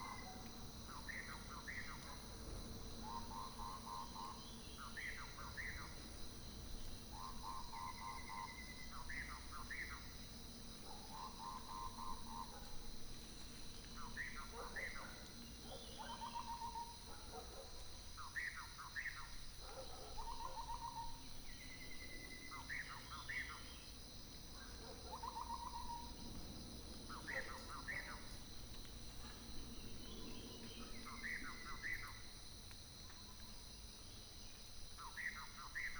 Lane 水上, 桃米里, Puli Township - Birdsong
Birdsong, Dogs barking, Early morning, Faced with bamboo and woods